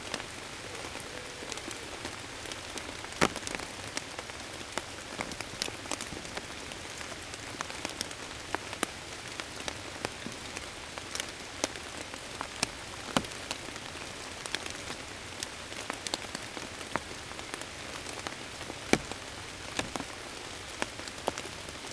A constant rain is falling since this morning. All is wet everywhere. In the forest, birds are going to sleep, it's quite late now. The rain is falling on maple leaves. Water tricle everywhere.
Mont-Saint-Guibert, Belgium, 22 May 2016